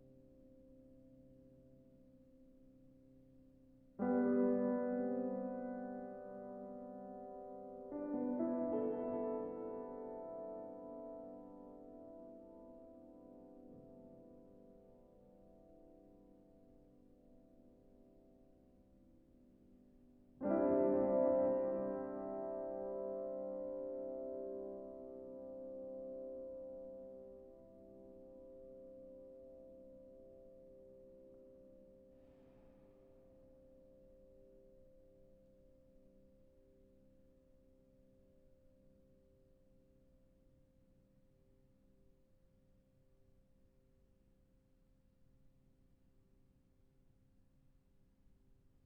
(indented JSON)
{
  "title": "Osek, Česká republika - walking in the cloister",
  "date": "2015-09-25 15:21:00",
  "latitude": "50.62",
  "longitude": "13.69",
  "altitude": "312",
  "timezone": "Europe/Prague"
}